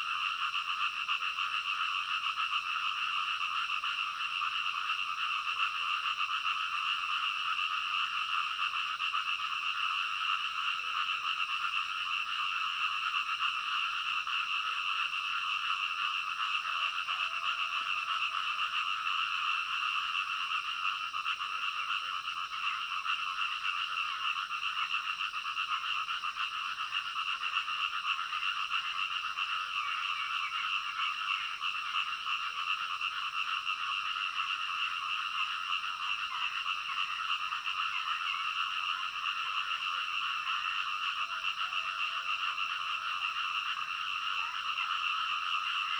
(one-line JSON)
{"title": "Zhonggua Rd., Puli Township, Nantou County - Frogs chirping", "date": "2015-06-11 03:57:00", "description": "Frogs chirping, Early morning\nZoom H2n MS+XY", "latitude": "23.94", "longitude": "120.92", "altitude": "503", "timezone": "Asia/Taipei"}